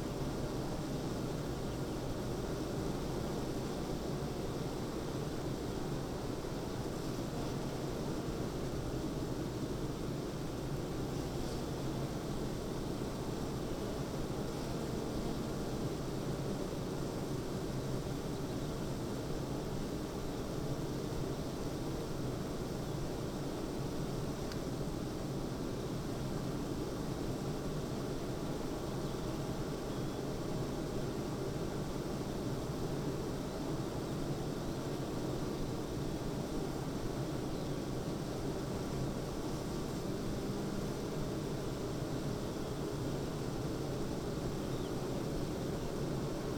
Green Ln, Malton, UK - bee hives ...
bee hives ... eight bee hives in pairs ... the bees to pollinate bean field ..? produce 40lbs of honey per acre ..? xlr SASS to Zoom H5 ... bird song ... calls ... corn bunting ... skylark ...
England, United Kingdom, 25 June 2020, 05:50